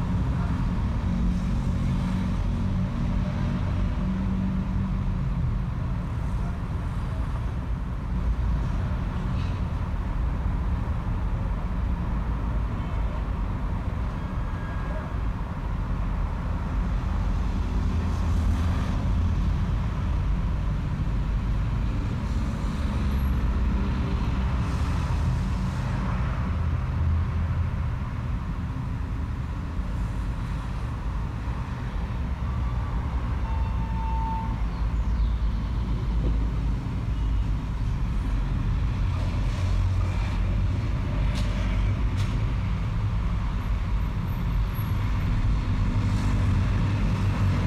In this audio you will hear many sounds such as the sounds of birds, passing trucks, passing cars, motorcycles and wind.